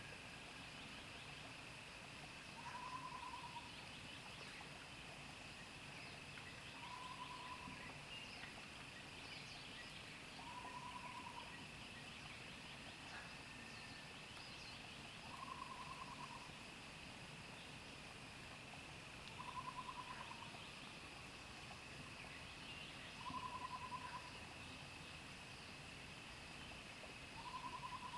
TaoMi Li., 桃米生態村 Puli Township - Early morning
Early morning, Bird calls, Frogs sound, Sound of insects
Zoom H2n MS+XY
Nantou County, Puli Township, 桃米巷11-3號, 30 April 2015, ~06:00